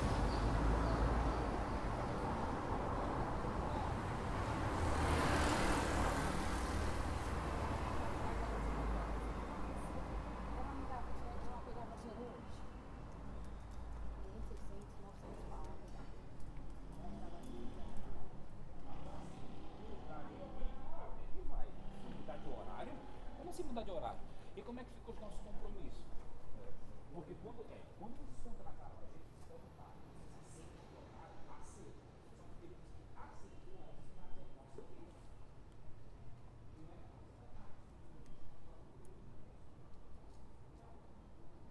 This was recorded in front of a small building which exist a restaurant at the first floor and an English school at the second one. It was recorded by a Tascam DR-05 placed on the floor of a busy avenue.
São Bernardo do Campo - SP, Brazil